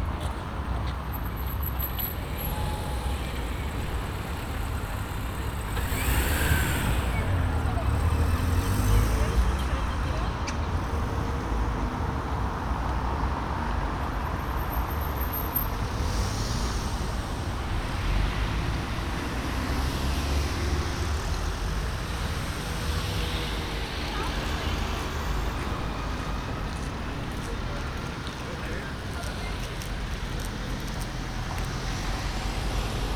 Den Haag, Kneuterdijk, Den Haag, Nederland - Kneuterdijk
Binaural recording.
General atmosphere on the Kneuterdijk in The Hagues.
Zuid-Holland, Nederland, 4 April 2014